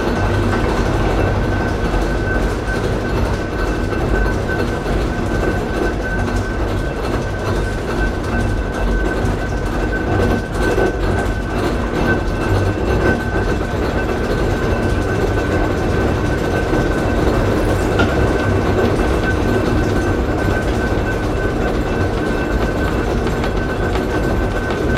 Funicular, Fribourg - Funicular in Fribourg: going down from the rear platform (opened)
Going down at the rear of the funicular of Fribourg, recording from the rear platform.
Recorded with a MS Setup Schoeps CCM41 + CCM8 in a Cinela Pianissimo Windscreen
on a Sound Devices 633
Recorded during the Belluard Festival in Fribourg
Fribourg, Switzerland, 29 June 2018